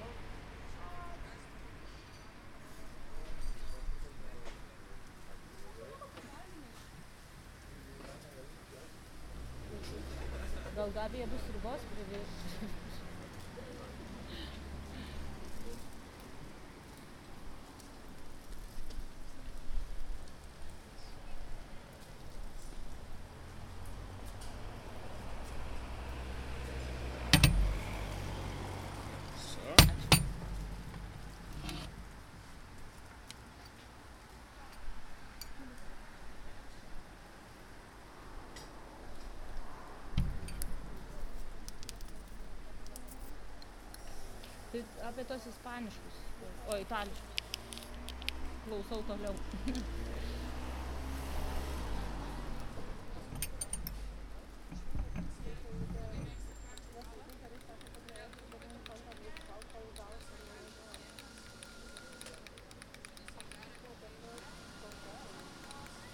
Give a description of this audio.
Sitting, drinking coffee, talking